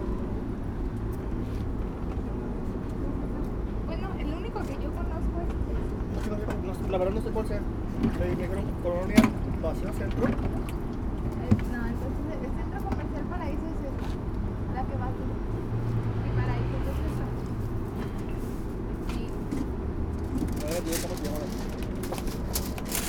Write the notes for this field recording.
I made this recording on February 17th, 2020, at 3:57 p.m. I used a Tascam DR-05X with its built-in microphones and a Tascam WS-11 windshield. Original Recording: Type: Stereo, Esta grabación la hice el 17 de febrero 2020 a las 15:57 horas.